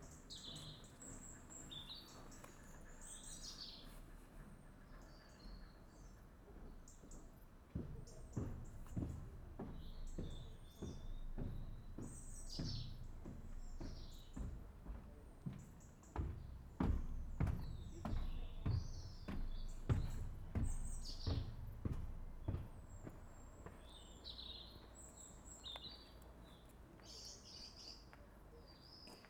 Ambient sounds of a small train station, birds chirp, distant cars are heard, and sometimes the sounds of people walking over the metal bridge that crosses over the track, two trains come in (train 1 at 3:05 and train 2 at 17:12)
Recorded with Roland R26 XY + Omni mics, only edit made was fade in/out
England, United Kingdom, 27 August 2020